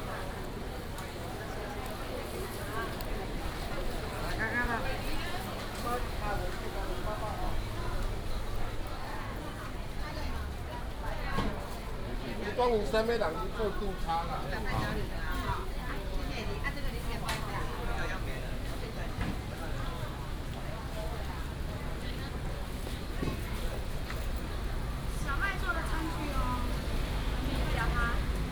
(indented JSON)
{
  "title": "南門市場, Taoyuan Dist., Taoyuan City - Walking through traditional markets",
  "date": "2016-10-12 12:47:00",
  "description": "Walking through traditional markets, Traffic sound",
  "latitude": "24.99",
  "longitude": "121.31",
  "altitude": "103",
  "timezone": "Asia/Taipei"
}